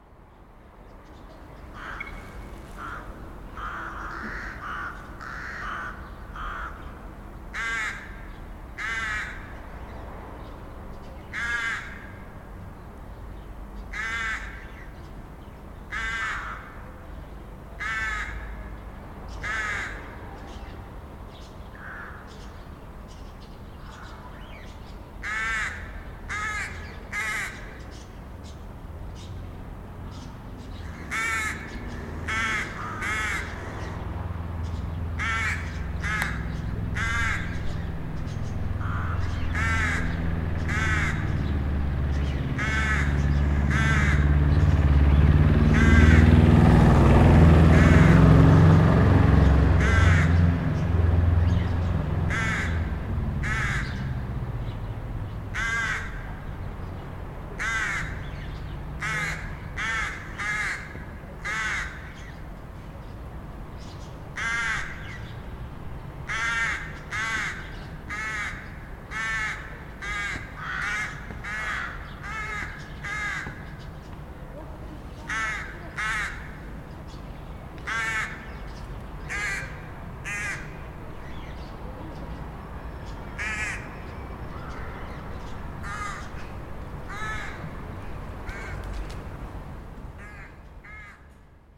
Rue Raymond Bélinguier, Chambéry, France - Corbotière
Corbotière urbaine, nichage de corbeaux freux et perruches de balcon.
10 April 2019, 15:30